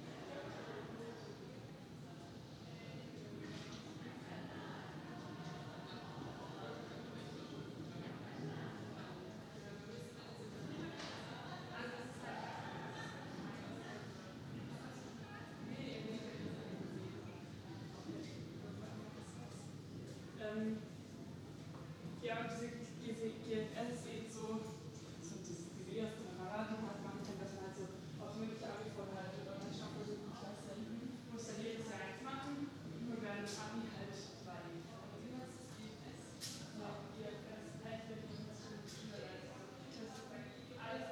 Mittelalterliche Gasse mit Fachwerkhäusern, Fußgängerzone.
ein Chor übt, Fußgänger...
a choir is practicing, pedestrians...
(Tascam DR-100MX3, EM172 (XLR) binaural)
16 October 2019, 16:45